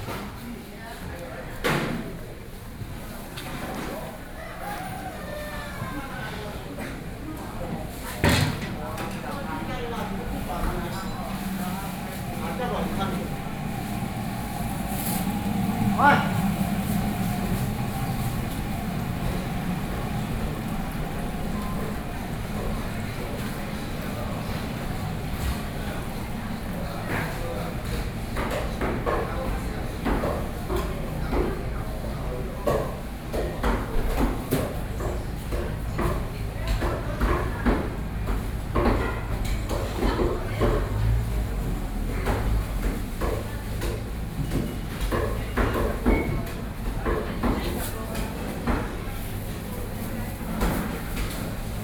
Zhongzheng Rd., Xizhi Dist., New Taipei City - Traditional markets